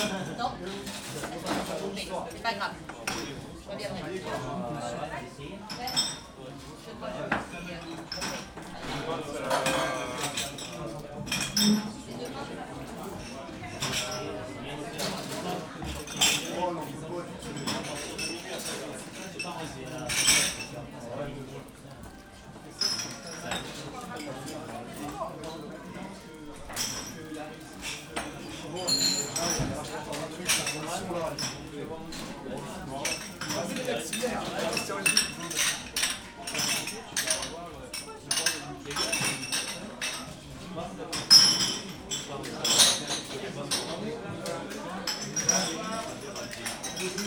Chartres, France - Crowded bar
On the last day of the year 2018, people take fun. We are here in a crowded bar. Chartres is a discreet city. People speak softly.
31 December 2018